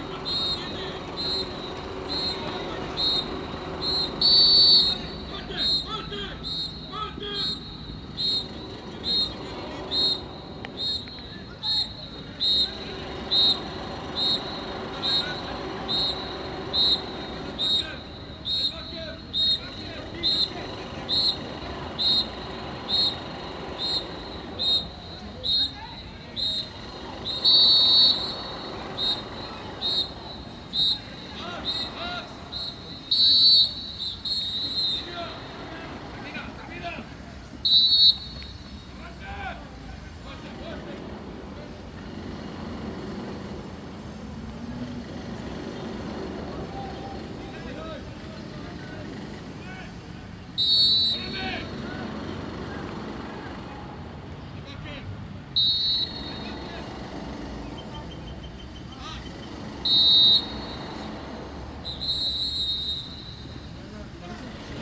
Tecnológico de Monterrey Campus Santa Fe, Santa Fe, Ciudad de México, D.F. - Training
Elite private university. College football training and construction work as background noise.